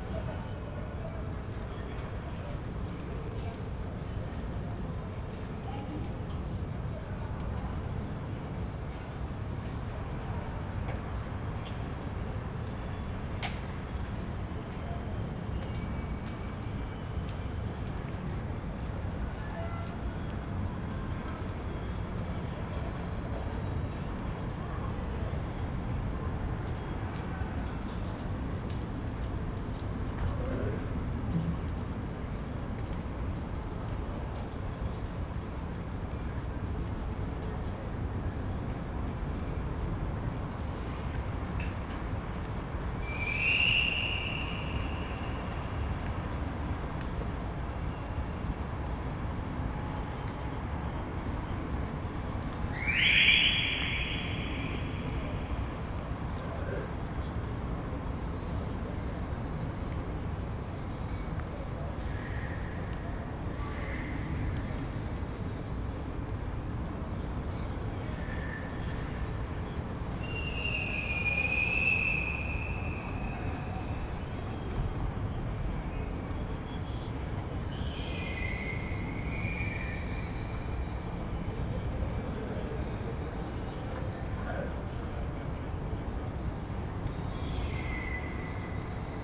Bruno-Apitz-Straße, Berlin Buch - appartement building block, night ambience
night ambience within Plattenbau building block, voices, someone's whisteling
(SD702, Audio Technica BP4025)